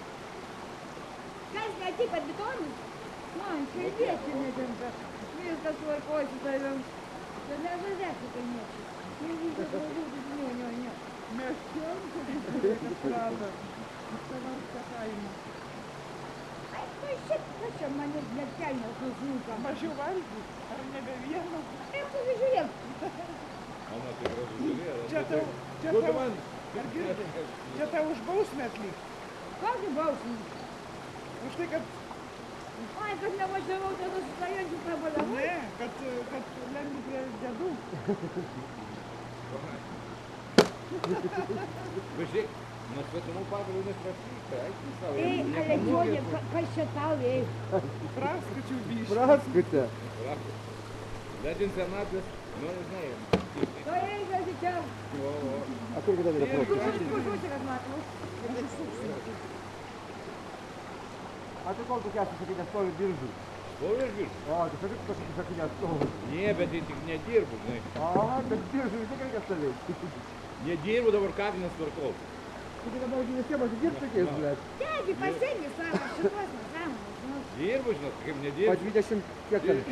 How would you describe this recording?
so called holy St. Jonas spring and local peoples taking it's water